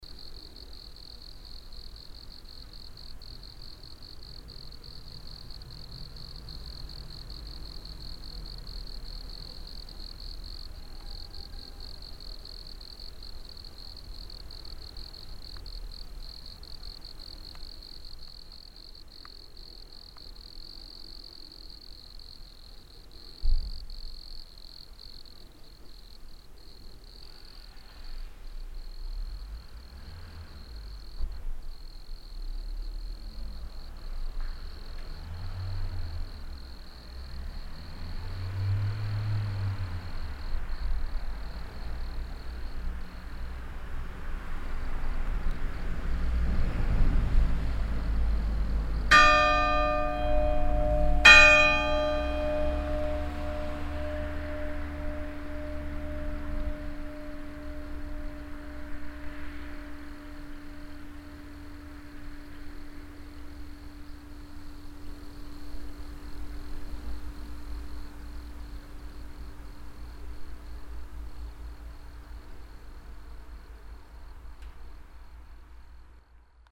brandenbourg, graveyard, church bells
On the graveyard. The soundfield of the crickets on an early summer afternoon, a car passing by and then the hour bells of the church.
Brandenburg, Friedhof, Kirchenglocken
Auf dem Friedhof. Das Geräuschfeld der Grillen an einem frühen Sommernachmittag, ein Auto fährt vorbei und dann läutet die Stundenglocke der Kirche.
Brandenbourg, cimetière, cloches d'église
Dans le cimetière. Le bruit d’ambiance des grillons un après-midi du début de l’été, une voiture qui passe et enfin les cloches de l’église qui sonnent l’heure juste.
Project - Klangraum Our - topographic field recordings, sound objects and social ambiences
9 August 2011, 15:37